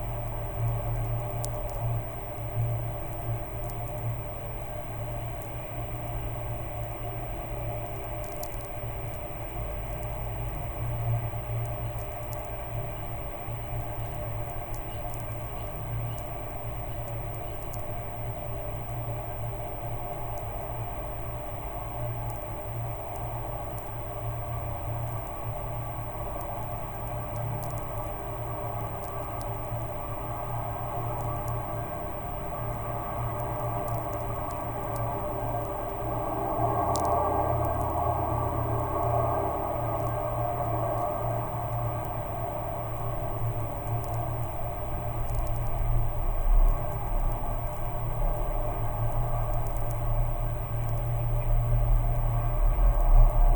Narkūnai, Lithuania, ghost trains on abandoned bridge
the place of my constant returns...big abandoned train bridge from soviet times. it was built but, as it happens, no trains crossed the bridge. it stands like some monument of vanity.
the recording was made in absolutely still day. very amplified contact microphones on metallic costructions of the bridge and electromagnetic antenna. and ghost trains appears